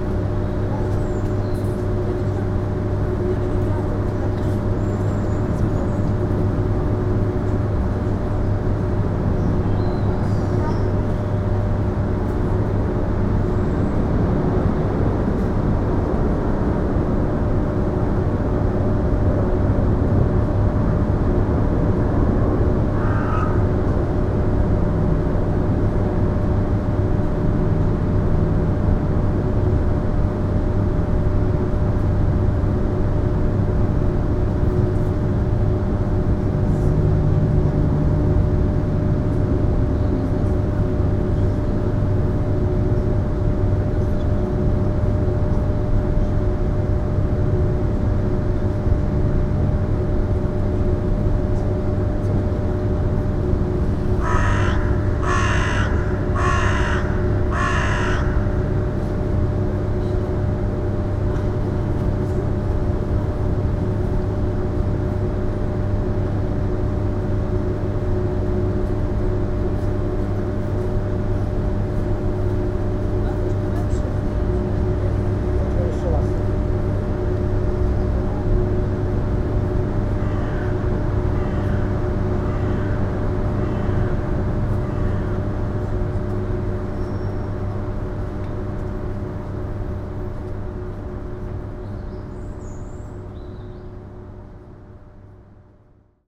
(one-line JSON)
{"date": "2011-10-29 14:03:00", "description": "Brussels, Rue Wiertz - Parc Leopold\nJust behind the European parliament, a huge air conditioning system.", "latitude": "50.84", "longitude": "4.38", "altitude": "77", "timezone": "Europe/Brussels"}